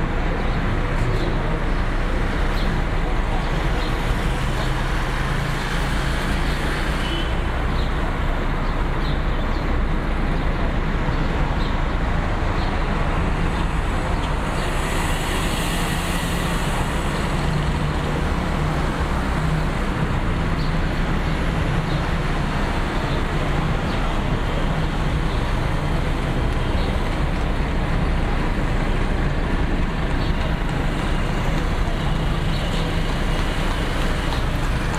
{"title": "Al Sok Al Siahi, Luxor City, Luxor, Luxor Governorate, Ägypten - Luxor Streetnoise", "date": "2019-03-04 08:40:00", "description": "Recorded from hotel balcony in the morning.", "latitude": "25.71", "longitude": "32.64", "altitude": "86", "timezone": "GMT+1"}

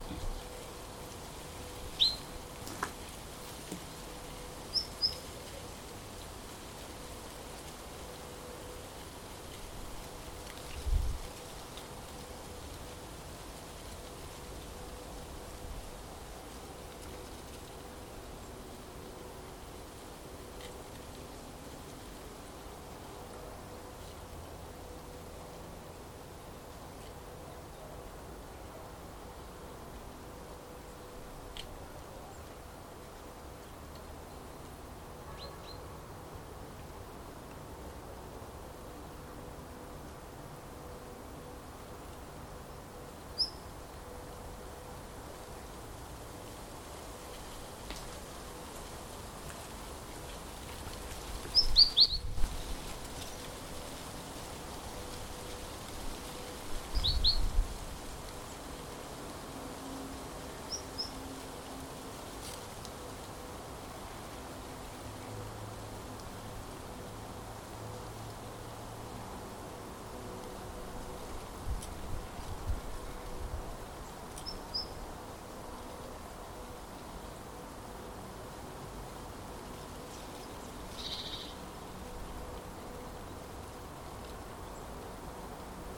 {"title": "вулиця Лівобережна, Костянтинівка, Донецька область, Украина - Ветренная погода", "date": "2019-03-11 07:55:00", "description": "Ветер. Пение птиц. Звуки производства и частного сектора", "latitude": "48.52", "longitude": "37.69", "altitude": "94", "timezone": "Europe/Kiev"}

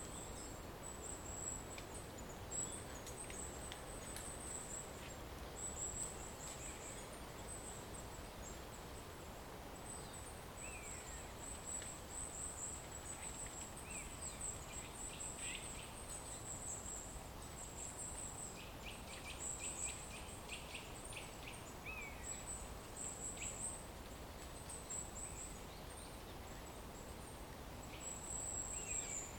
{"title": "Dzierżążno, Polska - Autumn in the woods", "date": "2014-10-12 17:15:00", "description": "Early autumn in the woods near the place where my family lives. Recorded during an sunday stroll. Recorded with Zoom H2n.", "latitude": "54.33", "longitude": "18.27", "altitude": "171", "timezone": "Europe/Warsaw"}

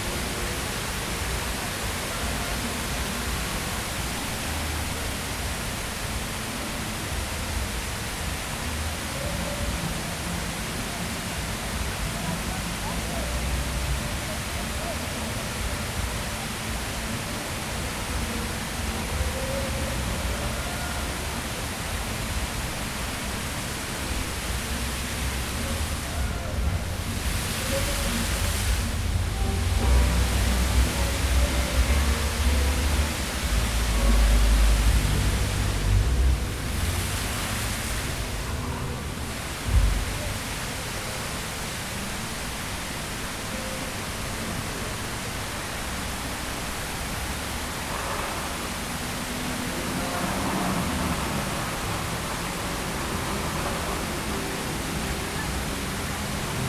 {"title": "E Randolph St, Chicago, IL, USA - Street Level", "date": "2017-06-09 14:44:00", "description": "Walking the length of the fountain at street level looking into the plaza.", "latitude": "41.88", "longitude": "-87.62", "altitude": "179", "timezone": "America/Chicago"}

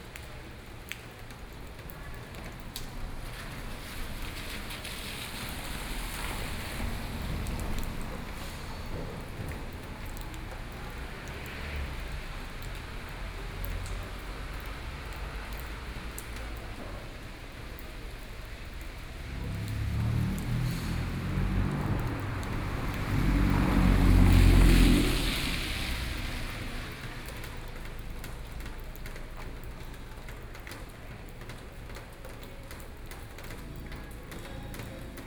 {"title": "Shacheng Rd., Toucheng Township - Rainy Day", "date": "2013-11-07 14:28:00", "description": "Rainy streets of the town, Binaural recordings, Zoom H4n+ Soundman OKM II", "latitude": "24.86", "longitude": "121.82", "altitude": "14", "timezone": "Asia/Taipei"}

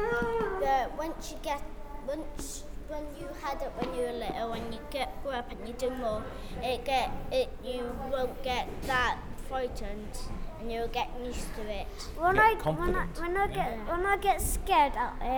{"title": "Main hall class 3/4R", "date": "2011-03-21 14:15:00", "latitude": "50.39", "longitude": "-4.10", "altitude": "72", "timezone": "Europe/London"}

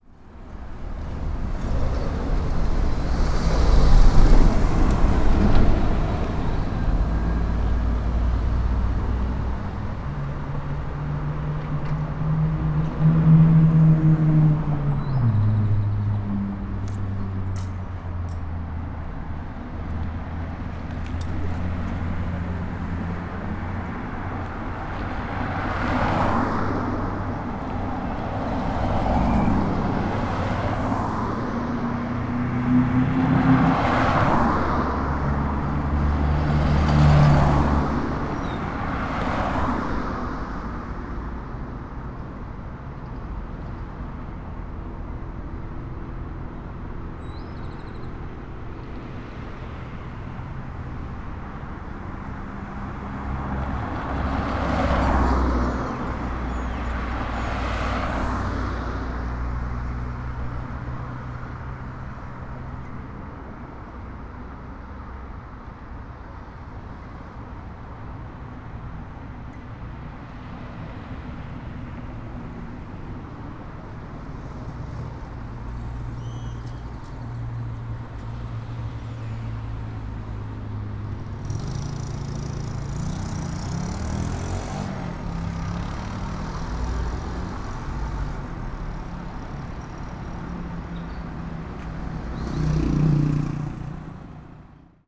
{"title": "de Mayo, Obregon, León, Gto., Mexico - El Malecón en día domingo.", "date": "2022-06-05 08:59:00", "description": "The Malecon on Sunday.\nI made this recording on june 5th, 2022, at 8:59 p.m.\nI used a Tascam DR-05X with its built-in microphones and a Tascam WS-11 windshield.\nOriginal Recording:\nType: Stereo\nEsta grabación la hice el 5 de junio de 2022 a las 8:59 horas.", "latitude": "21.13", "longitude": "-101.68", "altitude": "1808", "timezone": "America/Mexico_City"}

